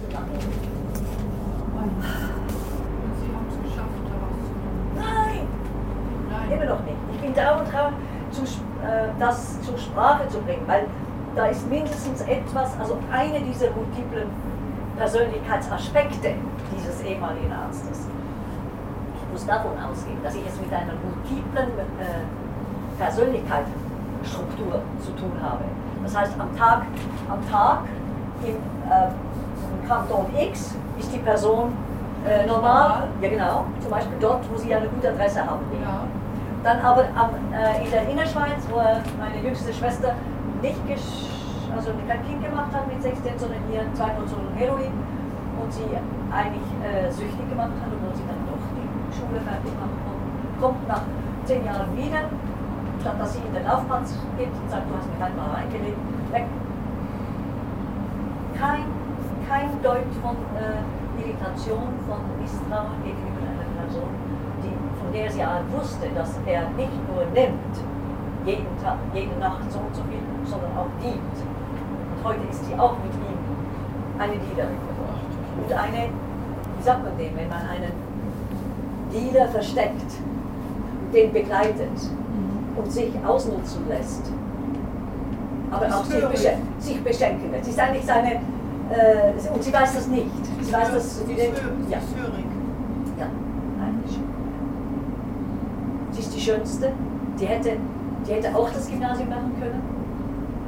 zurich, inside train, weird speech
woman holding a strange speech to nobody in particular. inside train restaurant, train zurich - zurich airport. recorded june 15, 2008. - project: "hasenbrot - a private sound diary"